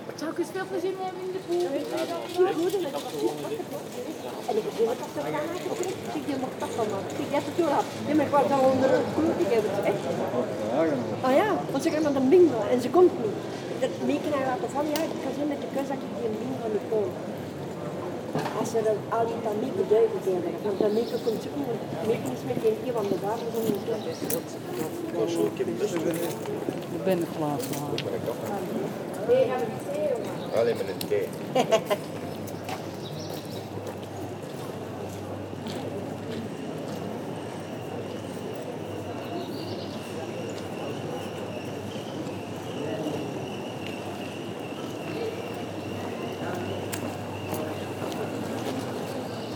Mechelen, Belgique - Cobblestones street
A very old street of Mechelen, made with cobblestones. People discussing, bicycles driving fast on the cobblestone : the special pleasant sound of an every day Flemish street. At the end, a student with a suitcase, rolling on the cobblestones.